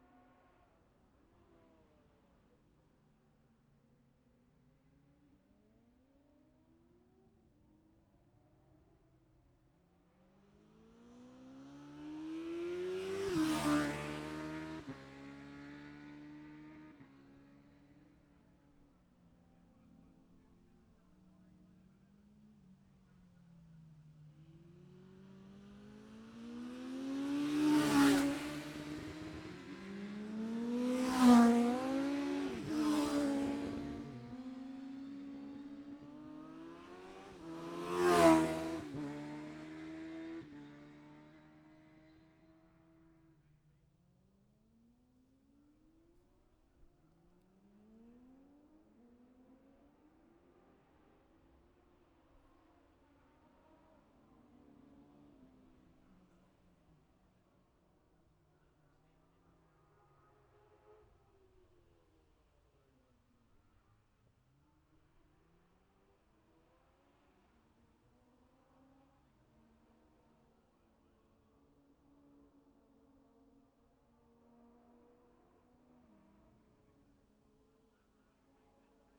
Jacksons Ln, Scarborough, UK - Gold Cup 2020 ...
Gold Cup 2020 ... Classic Superbikes ... Memorial Out ... dpa 4060s to Zoom H5 ...
11 September 2020